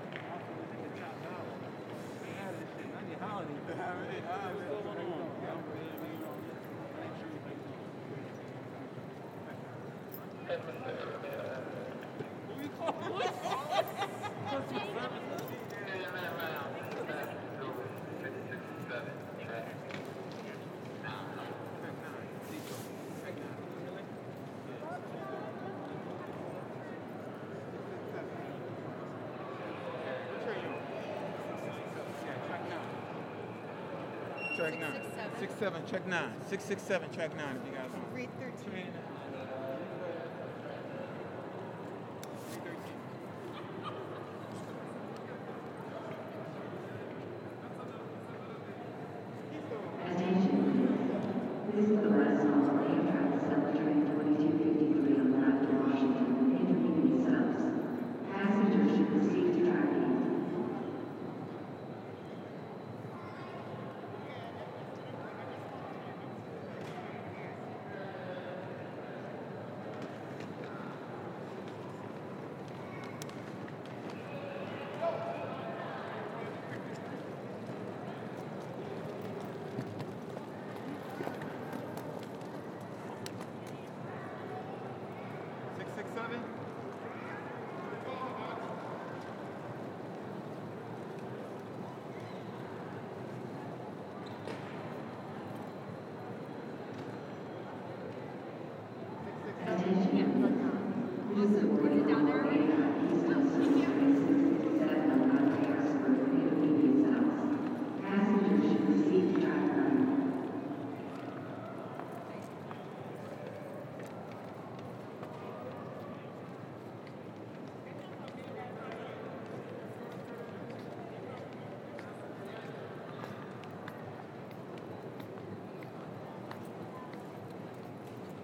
Boarding Announcements at Moynihan Train Hall, New York Penn Station.
United States, 15 February 2022